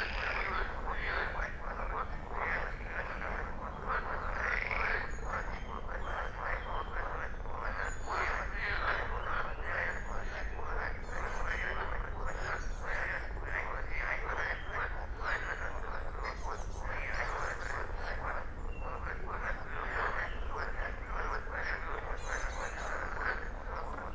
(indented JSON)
{
  "title": "Königsheide, Berlin - frog concert",
  "date": "2018-06-04 20:15:00",
  "description": "Berlin Königsheide, remains of an ancient forest, little pond with many frogs, and many Long-tailed tits (german: Schwanzmeise) in the oak trees.\n(Sony PCM D50, Primo EM172)",
  "latitude": "52.45",
  "longitude": "13.49",
  "altitude": "35",
  "timezone": "Europe/Berlin"
}